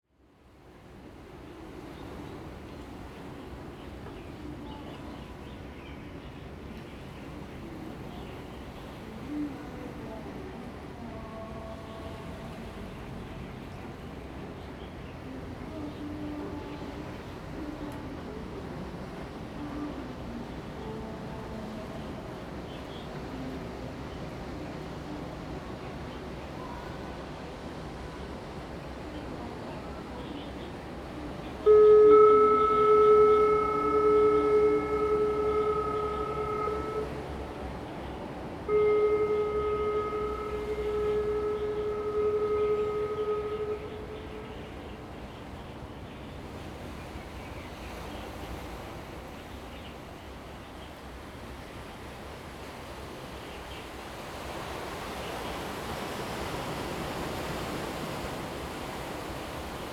本福村, Hsiao Liouciou Island - Birds singing
Birds singing, Traffic Sound, Sound of the waves
Zoom H2n MS +XY